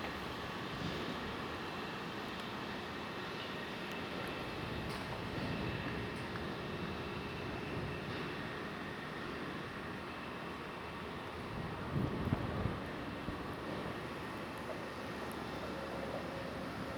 Bayonne, NJ
whining machines, wind